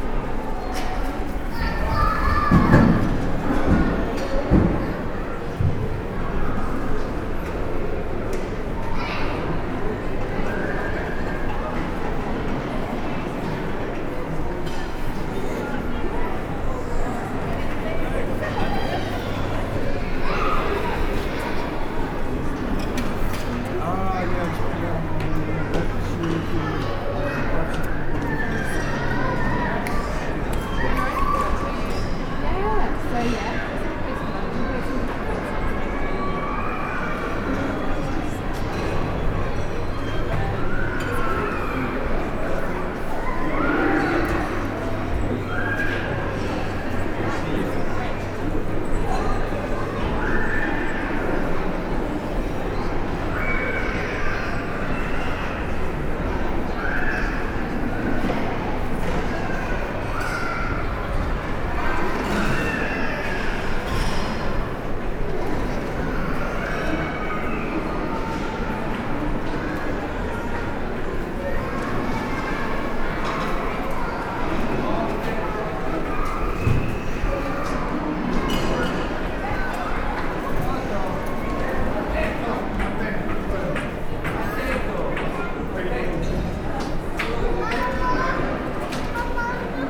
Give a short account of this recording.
The wonderful reverberant interior spaces of the Childrens Museum. Recorded with a Mix Pre 6 II with 2 Sennheiser MKH 8020s